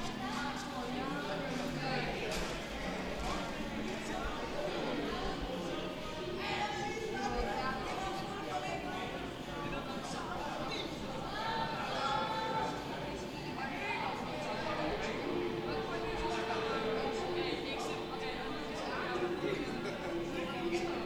Kortenbos, Den Haag, Nederland - BBQ garden party
The sound of a BBQ party my neighbors had last summer. Recorded from my bedroom window.
Recorded with Zoom H2 internal mics.
4 June, 23:30